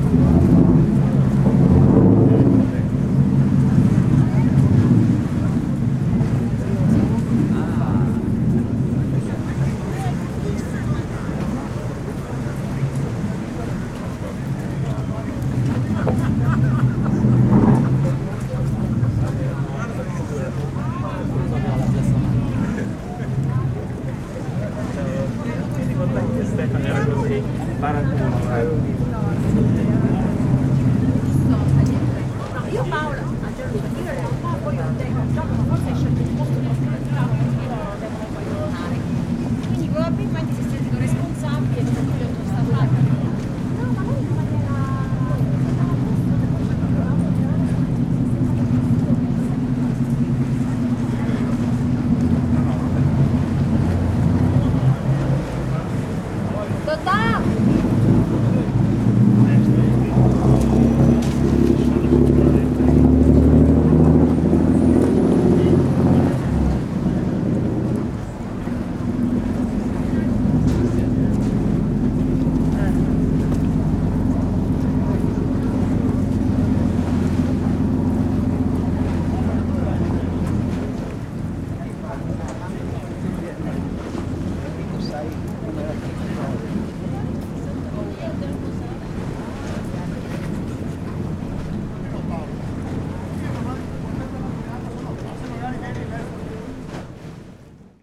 venezia la calcina - la calcina/ruskin house
venezia dorsoduro: la calcina/ruskin house
Italia, European Union, 24 October 2009